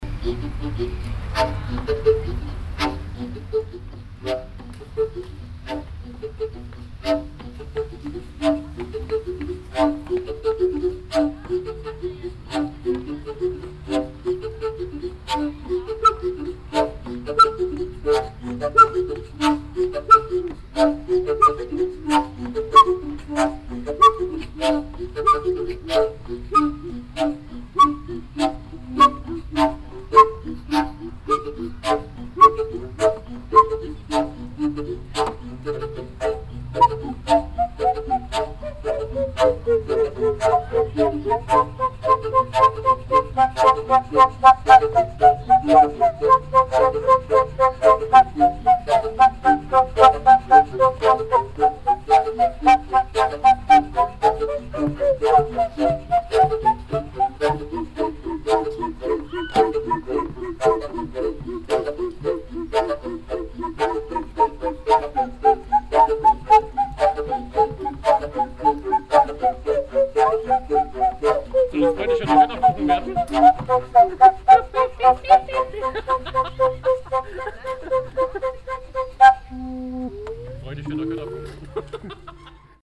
At the theatre square. The sound of the water orchestra's pump organs here performed in a shortly documented session performed by visitors and theatre members.
soundmap d - topographic field recordings and social ambiences